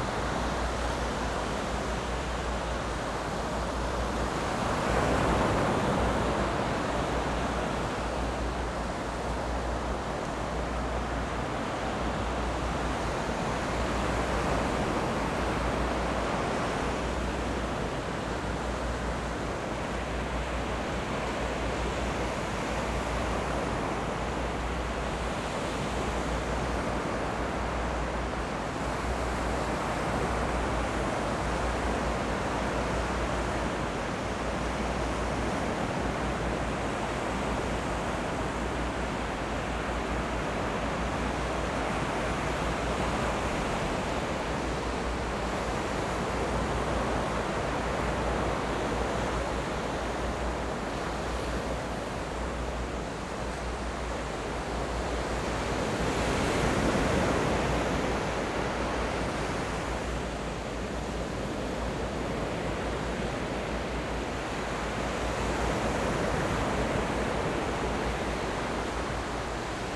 Yilan County, Taiwan - Sound of the waves
Sound of the waves
Zoom H6 MS+ Rode NT4
Zhuangwei Township, Yilan County, Taiwan, 26 July, 14:38